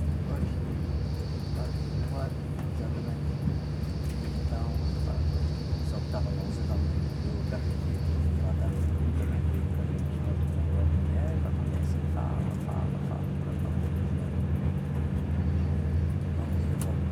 Azambuja, on the train to porto - phone conversation
a man talking on the phone during the journey to porto. the conversation went on for over two hours. in the background hum and rumble of the train.
Portugal, 29 September, 12:06